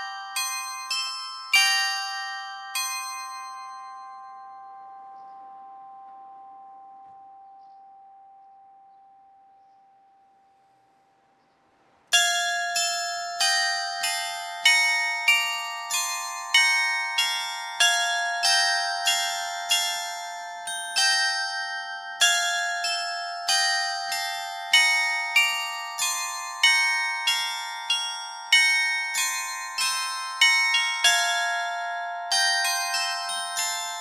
Pl. de la Mairie, Aix-Noulette, France - carillon de Aix Noulettes
Aix Noulettes (Pas-de-Calais)
Carillon - place de la mairie
Suite de ritournelles automatisées programmées depuis la mairie